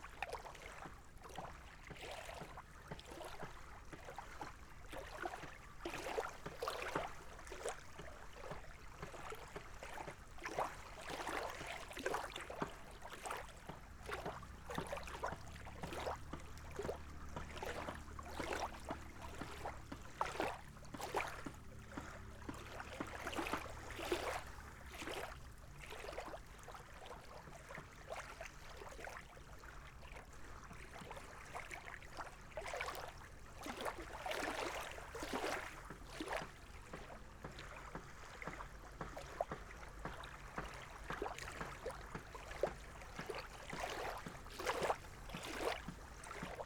Lithuania, Mindunai, on lakeshore
evening on the lakeshore...lots of human sounds:)